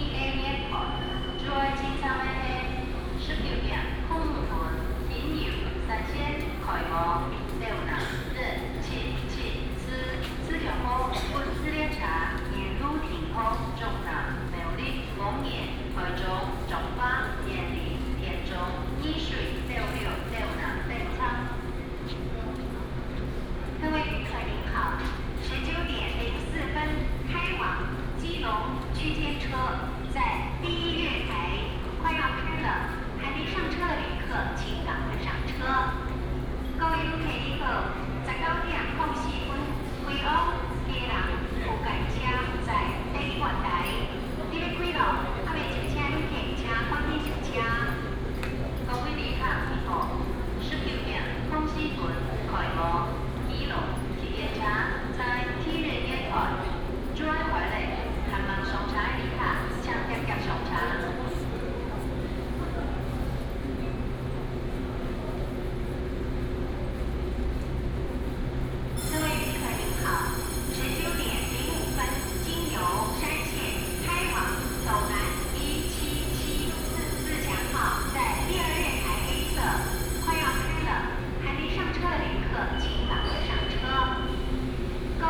新竹火車站, Hsinchu City - walking into the Station
From the station hall, Walk into the station platform, Station information broadcast